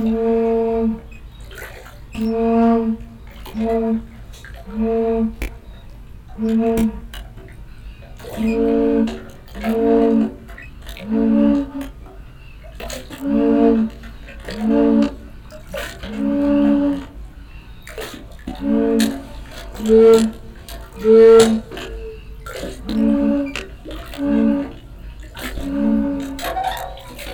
wasserorchester, wasser orgel
H2Orchester des Mobilen Musik Museums - Instrument Wasserorgel - temporärer Standort - VW Autostadt
weitere Informationen unter